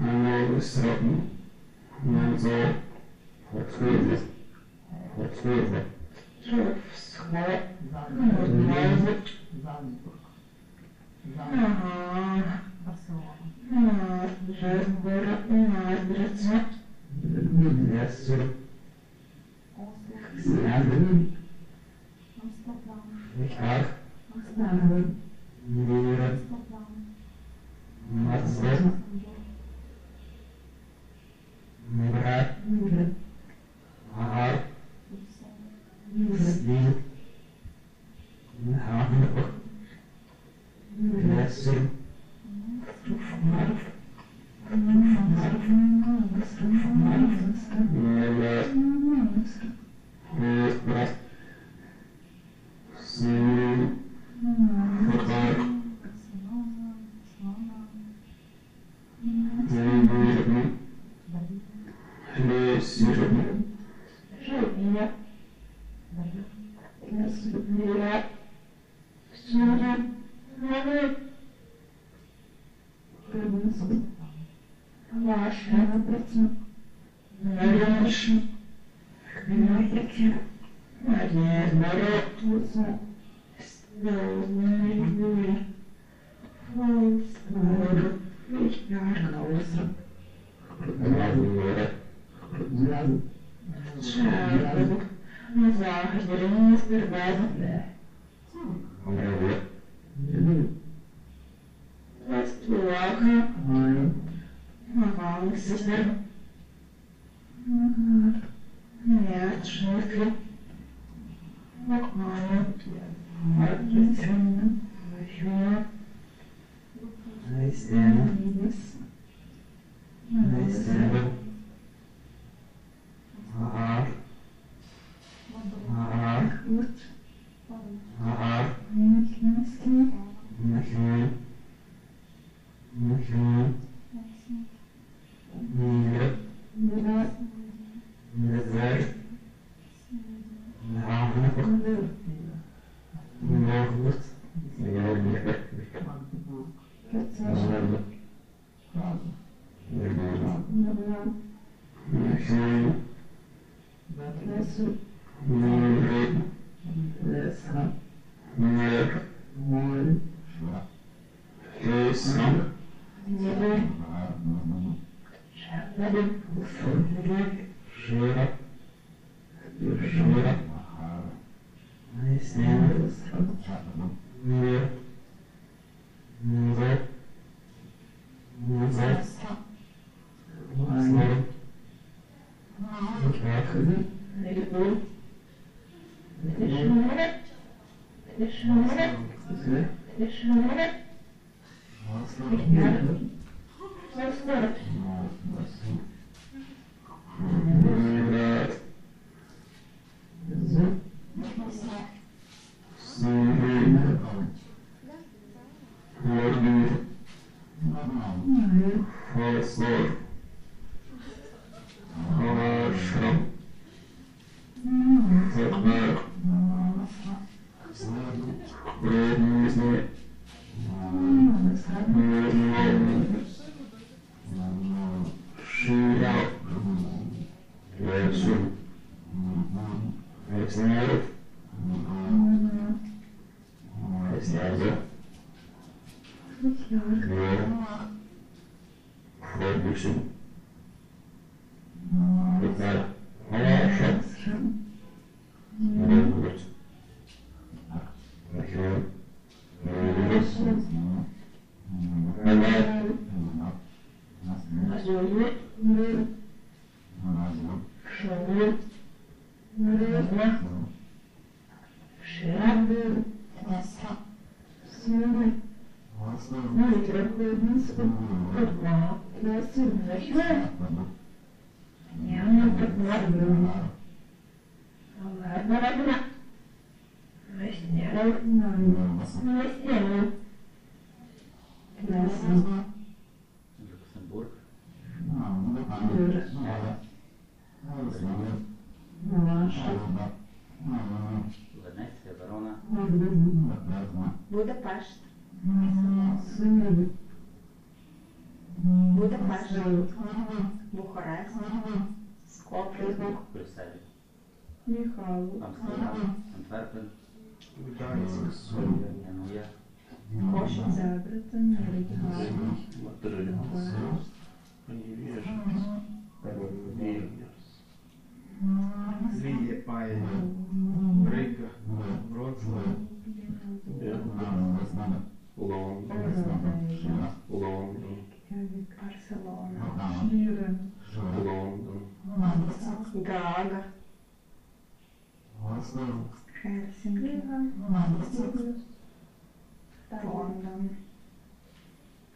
"sny po europu" (dreams of Europe) by sergiy petlyuk
27 August 2009, ~17:00